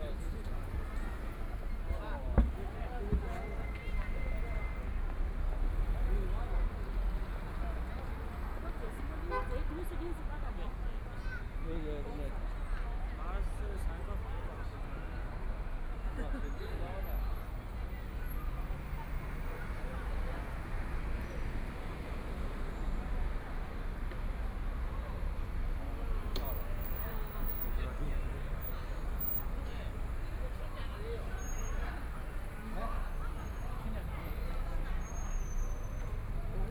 Hongkou District, Shanghai - soundwalk
Walking on the road, Binaural recording, Zoom H6+ Soundman OKM II
Hongkou, Shanghai, China